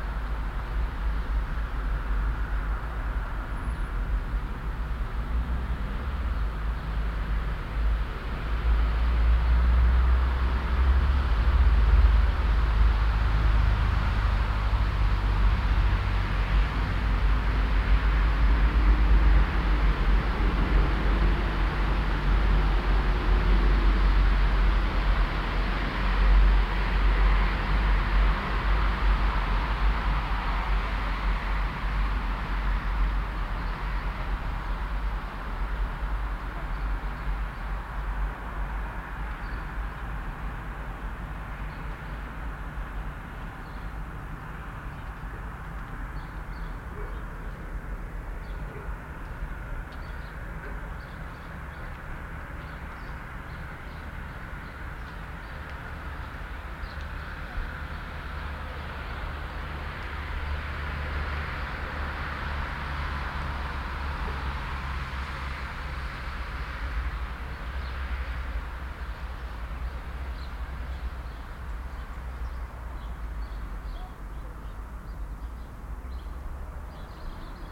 Brückenstraße, Wrist, Deutschland - Sunday morning in the countryside
December Sunday morning on a street in a small rural town. Mostly traffic from the near main road, some chirping birds, and very quiet a few pedestrians talking and coughing in a distance.
Binaural recording, Soundman OKM II Klassik microphone with A3-XLR adapter and windshield, Zoom H6 recorder.
Wrist, Germany, 17 December 2017, 10:42